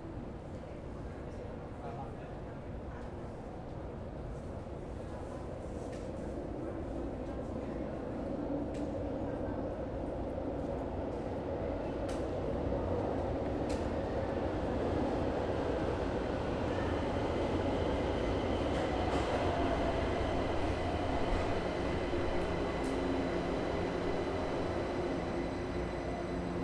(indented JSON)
{
  "title": "Av. Paulista - Bela Vista, São Paulo - SP, 01310-200, Brasil - São Paulos Subway - Consolação",
  "date": "2018-10-03 13:03:00",
  "description": "Inside Consolacão Subway station at Paulista Avenue, São Paulo, Brazil. Recorded with TASCAM DR-40 with internal microphones.",
  "latitude": "-23.56",
  "longitude": "-46.66",
  "altitude": "840",
  "timezone": "America/Sao_Paulo"
}